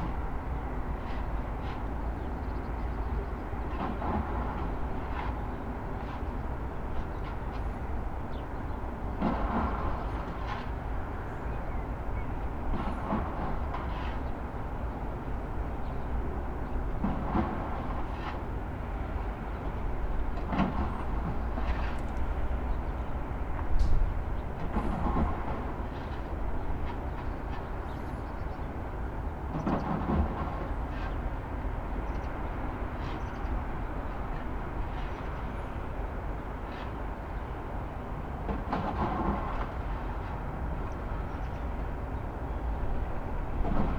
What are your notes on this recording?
surge of drill rattle coming from a house across the field. sounds of rubble being tossed into a container.